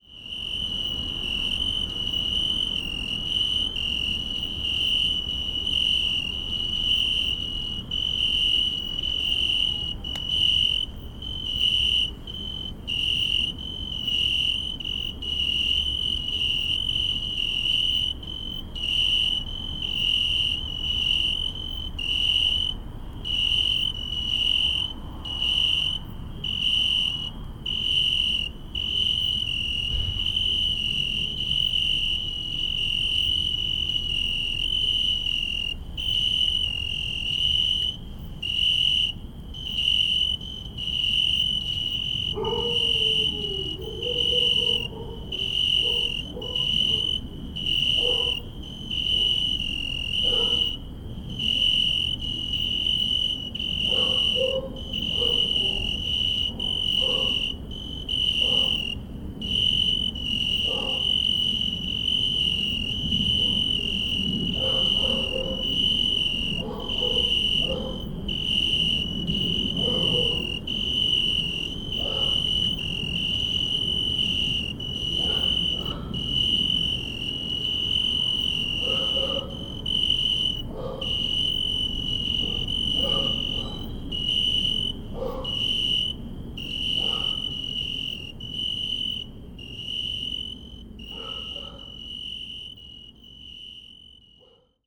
Alšova, Židlochovice, Czechia - Oecanthus Pellucens in Zidlochovice
Oecanthus Pellucens, it is a typical insect in this town. It creates a typical summer soundscape of this area. This recording shows night chorus of oecanthus pellucens.